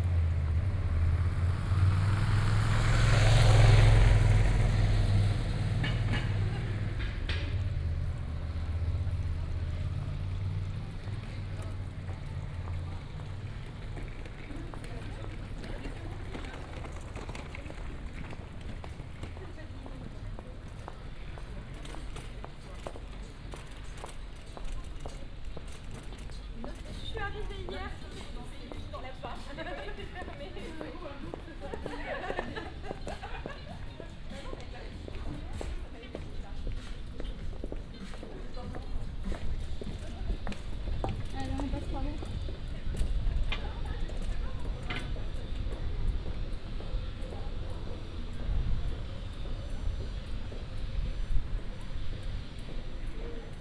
Pl. Forum de Cardeurs / Rue Venel, Aix-en-Provence, Frankreich - evening ambience, walk
early Monday evening ambience at Place de Forum des Cardeurs, short walk into Rue Venel
(PCM D50, OKM2)
Aix-en-Provence, France, 6 January 2014, ~18:00